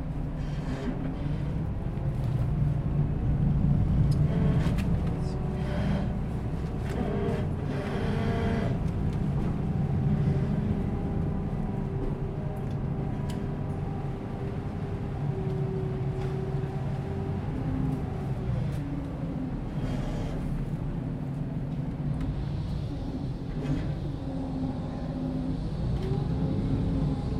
Silodam, Amsterdam, Nederland - Wasted Sound Ferry
Wasted GTA
‘‘And because the character is always middle aged, it’s referring to the life that could have been and now stops to exist. So, it doesn’t necessary reflect on the life that existed but to the life that could have existed but now will never exist.’’
Noord-Holland, Nederland, 6 November, 12:36